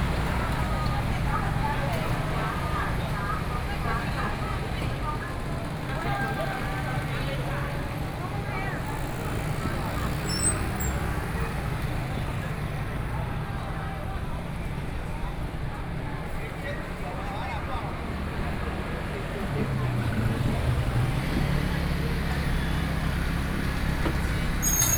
Zhonghua Rd., Pingtung City - Walking through the market
Walking in the traditional market